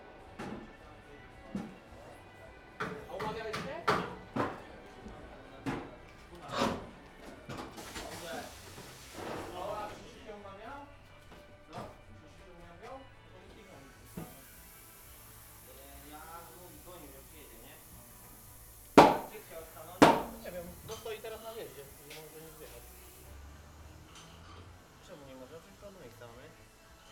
Poznan, Gorczyn district, tire shop - tire replacement
at a tire shop, tires being replaced on several cars, sound of machines, air pumps, hydraulic ramp. mechanics making appointments with customers, chatting.